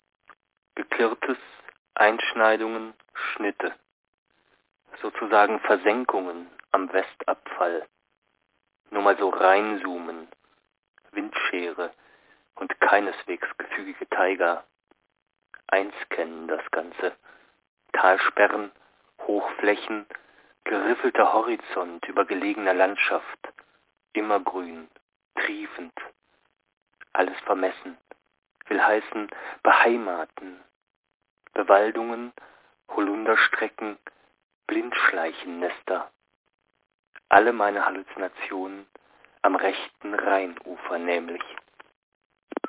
altenberg: altenberger dom - Hzgt. -> Berg
phone call to radio aporee ::: maps - altenberger dom, Altenberg, Hzgt.
Odenthal, Germany, 26 March 2007, 16:57